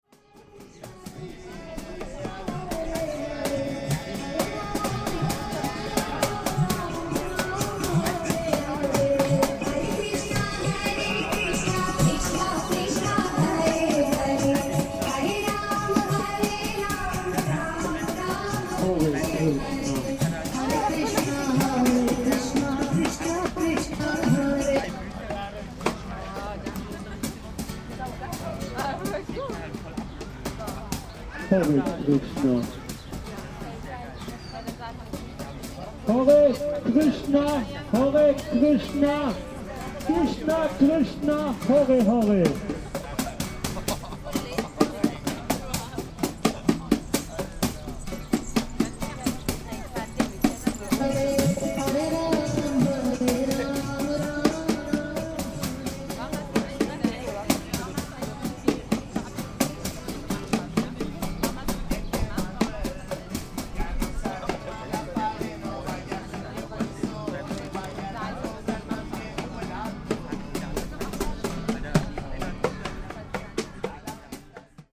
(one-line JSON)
{"title": "maybachufer, competing sounds - hare krishna vs. latino", "description": "Tue May 14th 2008, market day, no speakers corner today, but competing sounds, the krishna disciple obviously disliked a inspired latino couple, playing guitar and percussion at the nice terrace, so he turned up the volume of his amplifier. hare krishna.", "latitude": "52.49", "longitude": "13.43", "altitude": "38", "timezone": "GMT+1"}